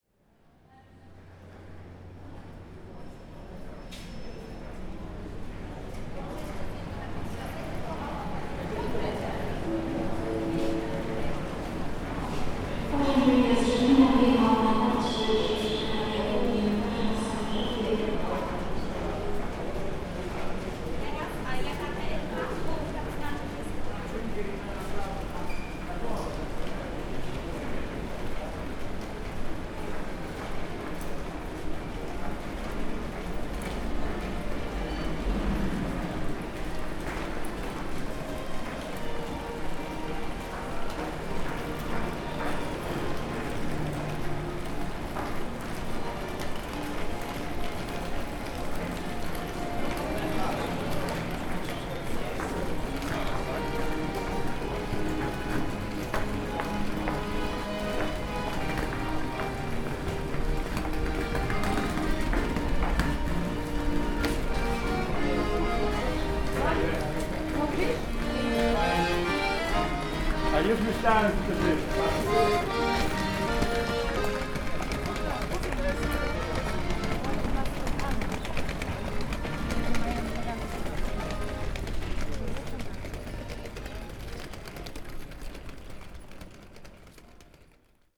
Wroclaw, train station, underpass
recording from an underpass at the main train station in Wroclaw. tourists, stallholders, buskers